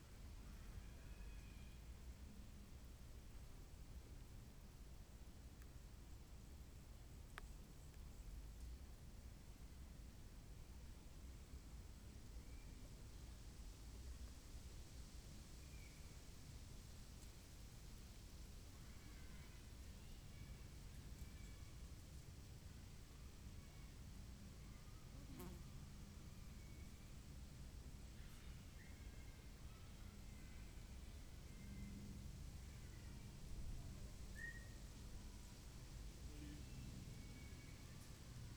Berlin Wall of Sound, rudow border birch grove 080909
Berlin, Germany